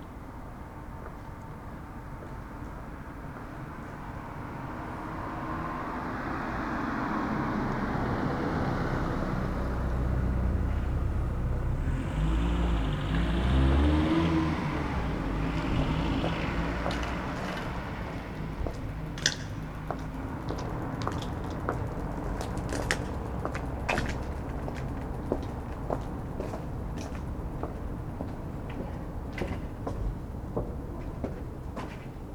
Berlin: Vermessungspunkt Friedelstraße / Maybachufer - Klangvermessung Kreuzkölln ::: 17.04.2013 ::: 02:17